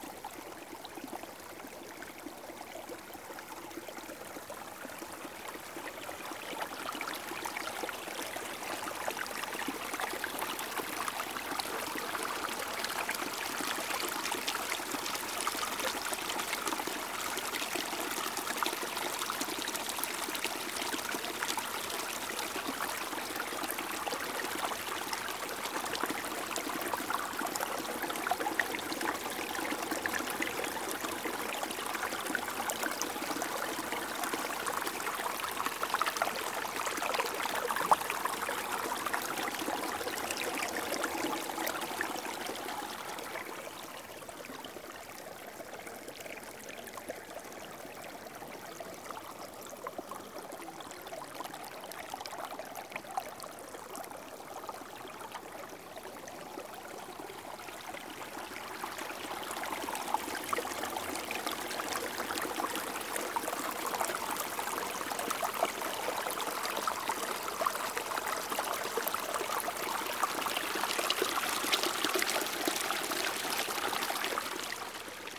{"title": "à proximité de l'hôtel au parfum des bois - Le ruisseau de Mazan", "date": "2017-06-20 21:00:00", "description": "Le ruisseau de Mazan à l'entrée de Saint Cirgue en Montagne", "latitude": "44.75", "longitude": "4.10", "altitude": "1052", "timezone": "Europe/Paris"}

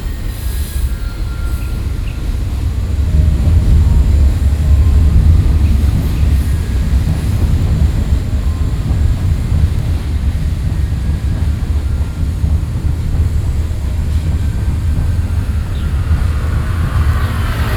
{"title": "Gongliao, New Taipei City - Intersection", "date": "2012-06-29 16:47:00", "latitude": "25.02", "longitude": "121.91", "altitude": "23", "timezone": "Asia/Taipei"}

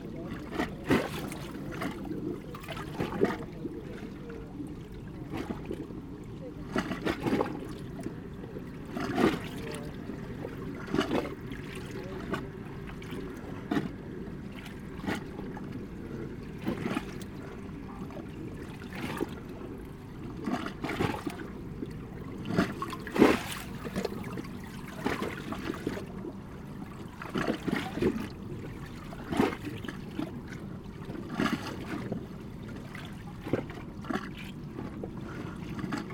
{"title": "Riemst, Belgique - The Albert canal", "date": "2018-10-19 21:40:00", "description": "One of my favourite place : at night it's cold, snuggled in a sleeping bag, drinking an hot infusion, looking to the barges driving on the canal, far away the very beautiful Kanne bridge. One of the boat was the Puccini from Remich (Luxemburg, MMSI: 205522890), and I sound-spotted it driving 3 times !", "latitude": "50.81", "longitude": "5.67", "altitude": "60", "timezone": "Europe/Brussels"}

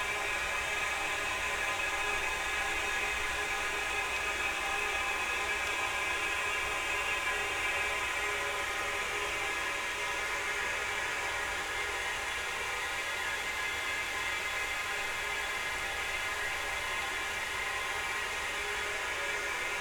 {"title": "Erkelenz, Pesch, Garzweiler II - watering equipment", "date": "2012-04-03 16:20:00", "description": "periodic watering of the surface, near village Pesch, at the edge of Garzweiler II coal mining, probably to prevent dust or to compact the soil befor excavating.\n(tech: SD702, Audio Technica BP4025)", "latitude": "51.05", "longitude": "6.46", "altitude": "97", "timezone": "Europe/Berlin"}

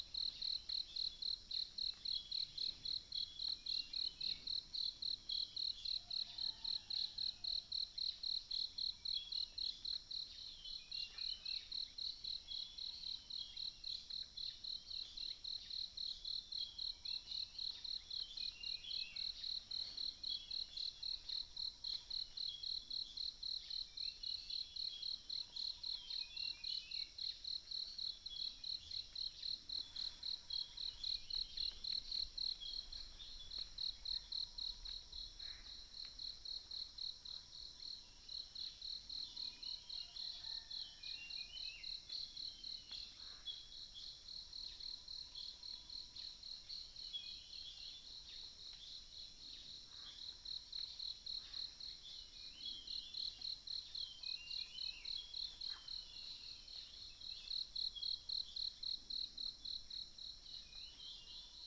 {"title": "草湳溼地, 埔里鎮桃米里, Taiwan - Bird and Insects sounds", "date": "2016-07-13 04:55:00", "description": "in the wetlands, Bird sounds, Insects sounds", "latitude": "23.95", "longitude": "120.91", "altitude": "584", "timezone": "Asia/Taipei"}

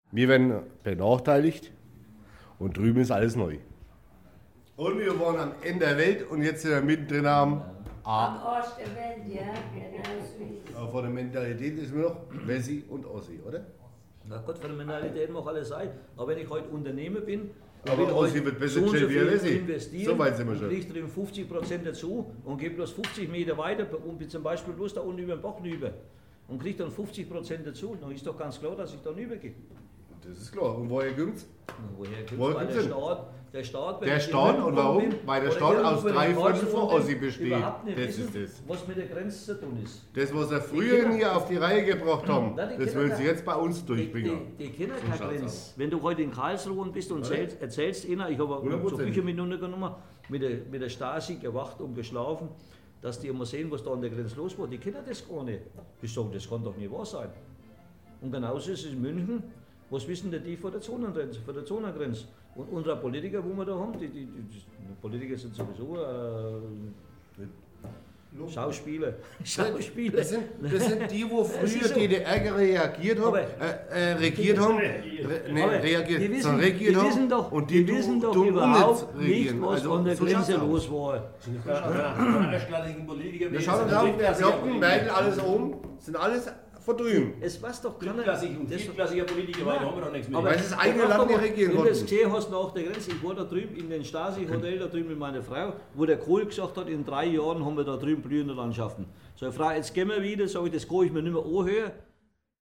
{"title": "nordhalben - waldlust", "date": "2009-08-18 17:11:00", "description": "Produktion: Deutschlandradio Kultur/Norddeutscher Rundfunk 2009", "latitude": "50.37", "longitude": "11.52", "altitude": "485", "timezone": "Europe/Berlin"}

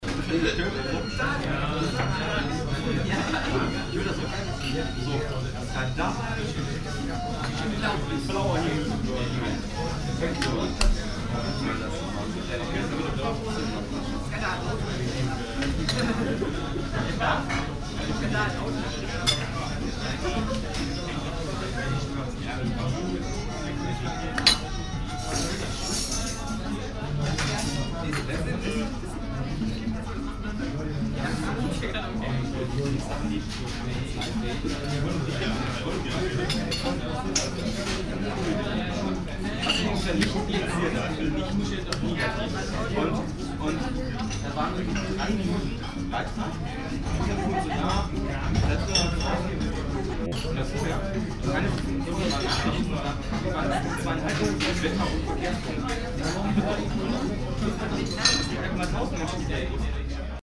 {"title": "café sur, inside - cologne, wormser str, cafe sur", "date": "2009-08-02 15:28:00", "description": "small and crowded local cafe in the afternoon\nsoundmap nrw: social ambiences/ listen to the people in & outdoor topographic field recordings", "latitude": "50.92", "longitude": "6.95", "altitude": "51", "timezone": "Europe/Berlin"}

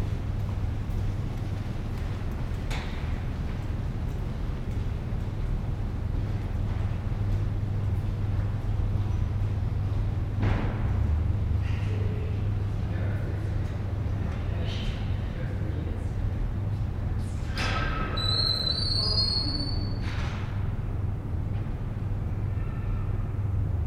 {"title": "Calgary +15 Center for Performing Arts bridge", "description": "sound of the bridge on the +15 walkway Calgary", "latitude": "51.04", "longitude": "-114.06", "altitude": "1053", "timezone": "Europe/Tallinn"}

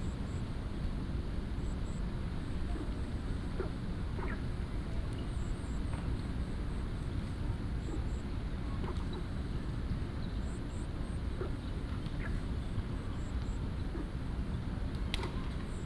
{"title": "台大醉月湖, Da’an Dist., Taipei City - Next to the lake", "date": "2015-07-25 19:08:00", "description": "The university campus at night, At the lake, Frogs chirping", "latitude": "25.02", "longitude": "121.54", "altitude": "12", "timezone": "Asia/Taipei"}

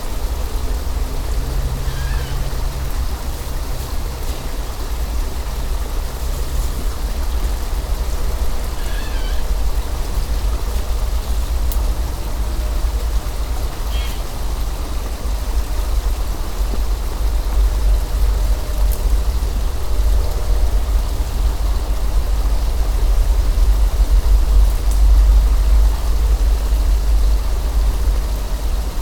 {"title": "J. Skvirecko g., Kaunas, Lithuania - Sena dvarvietė", "date": "2018-09-17 15:04:00", "latitude": "54.93", "longitude": "23.87", "altitude": "67", "timezone": "GMT+1"}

